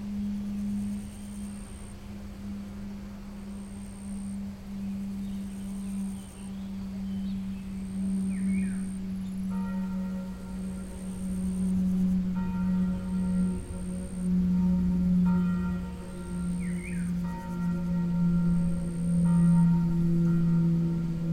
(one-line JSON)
{"title": "près Via Rhôna, Vions, France - Avion à Vions", "date": "2022-07-10 12:00:00", "description": "Il est midi à Vions, la cloche sonne mais un avion de tourisme trace un bel effet Doepler dans le ciel, je me suis abrité du vent sur le côté d'un champ de tournesols, les feuillages voisins bruissent au rythme du vent. C'est très international sur la piste cyclable.", "latitude": "45.83", "longitude": "5.80", "altitude": "236", "timezone": "Europe/Paris"}